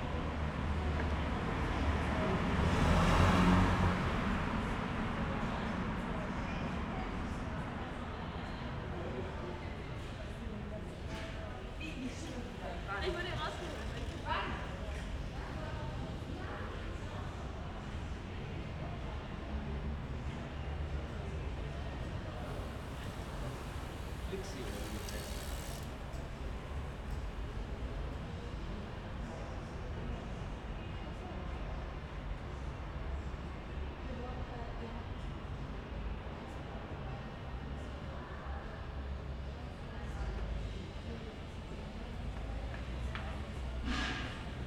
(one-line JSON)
{"title": "berlin, bürknerstraße: in front of radio aporee - saturday night steet sounds", "date": "2012-04-29", "description": "sitting in front of my door, on the sidewalk, listening to the saturay night sounds of the street, a warm spring night.\n(tech: SD702 Audio Technica BP4025)", "latitude": "52.49", "longitude": "13.42", "altitude": "45", "timezone": "Europe/Berlin"}